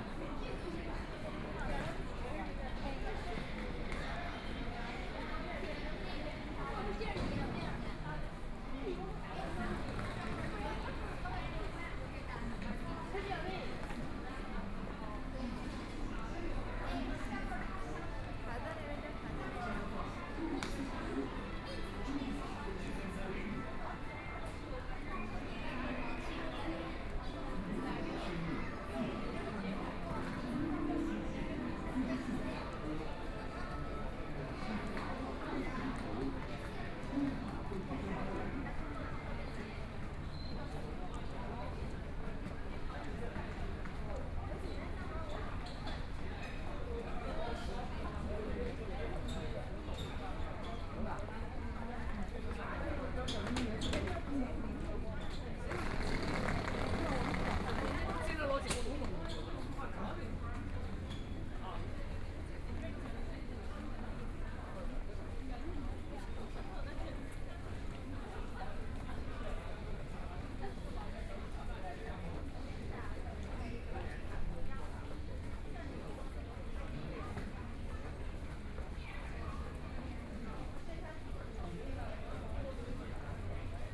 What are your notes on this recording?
walking in the Station, Transit station, The crowd, Binaural recording, Zoom H6+ Soundman OKM II